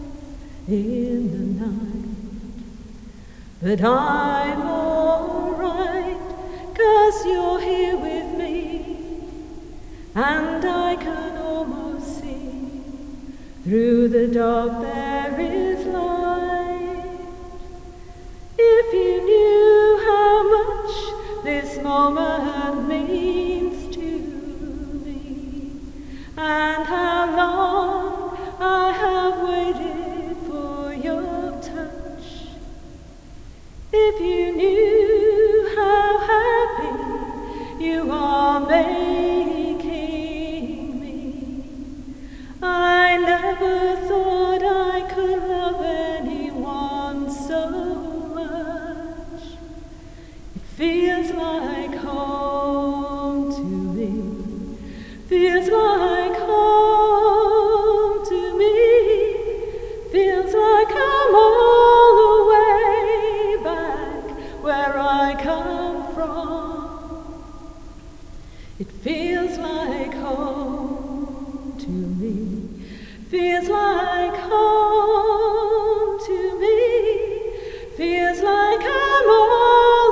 Singer in the Chapter House, Worcester Cathedral, UK - Singer
A visitor singing in the echoing Chapter House enjoying the acoustics. I was wandering in the cloisters, heard her voice and managed to capture this clip. MixPre 3 with 2 x Sennheiser MKH 8020s + Rode NTG3.
England, United Kingdom, 12 September 2019